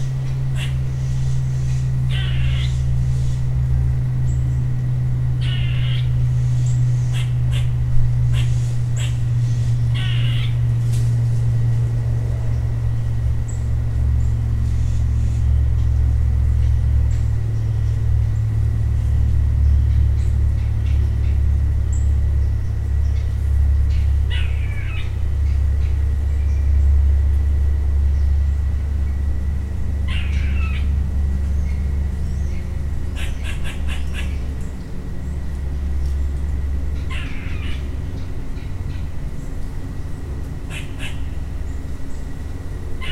Glendale Ln, Beaufort, SC, USA - Back Porch By The Marsh
The back porch of a house which is situated right in front of some marsh land. Squirrels, songbirds, a woodpecker, and an owl are among the many sounds heard.
[Tascam DR-100mkiii & Primo EM-272 omni mics]
South Carolina, United States, 24 December